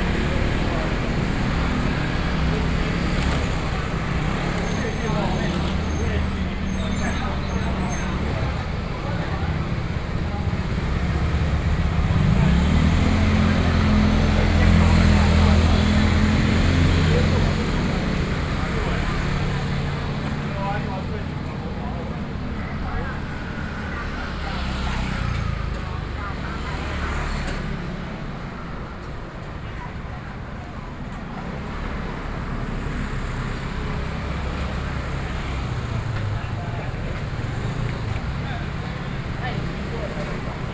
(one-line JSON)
{"title": "Sanchong, New Taipei city - SoundWalk", "date": "2012-10-05 21:33:00", "latitude": "25.07", "longitude": "121.50", "altitude": "10", "timezone": "Asia/Taipei"}